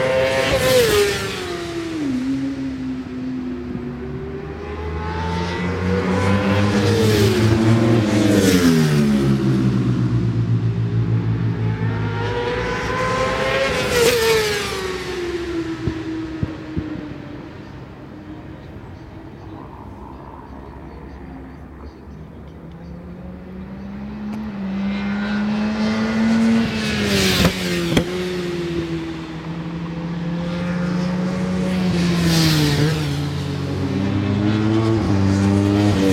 Brands Hatch GP Circuit, West Kingsdown, Longfield, UK - WSB 1998 ... Superbikes ... Qual ...
World Superbikes 1998 ... Superbikes ... qualifying ... one point stereo mic to minidisk ... the days of Carl Fogarty in his pomp ...